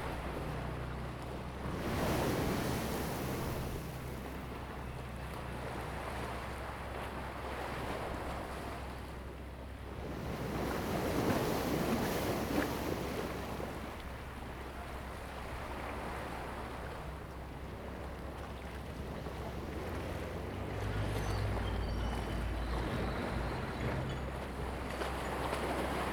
開元港, Koto island - Small port
Small port, Traffic Sound, Sound tide
Zoom H2n MS +XY